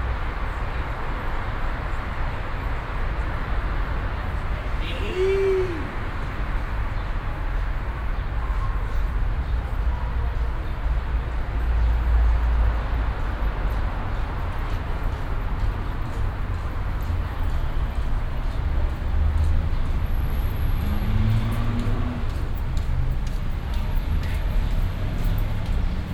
dresden, tram station, main station north
drunken homeless man at tram station uttering single vowels while tram arrives
soundmap d: social ambiences/ listen to the people - in & outdoor nearfield recordings